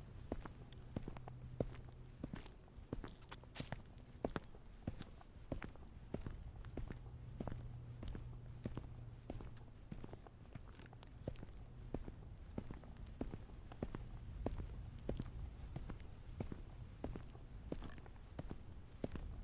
Tårngade, Struer, Denmark - Dry leaves on Tårngade, Struer (left side of street) 2 of 2
Start: Ringgade/Tårngade
End: Tårngade/Danmarksgade